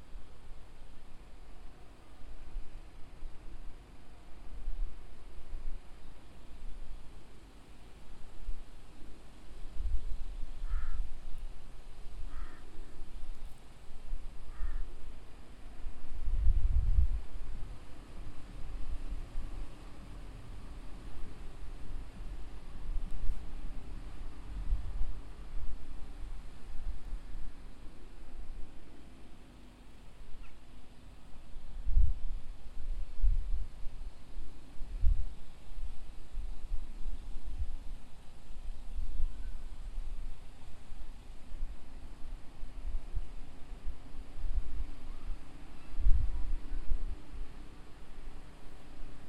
Sitting on a bench in a park near the Chertanovskaya metro station. A snow melting machine is working and crows can be heard.